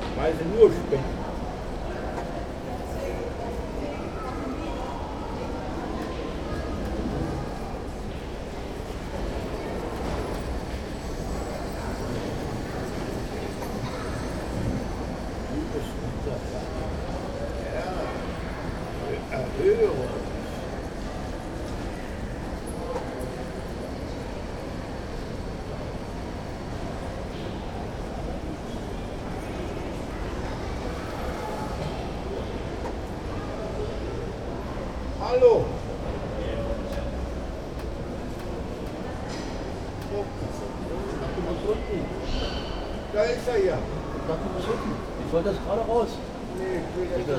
28.06.2009 16:50, ostbahnhof entry hall, coversation at the waiting room about various aspects of life (& death)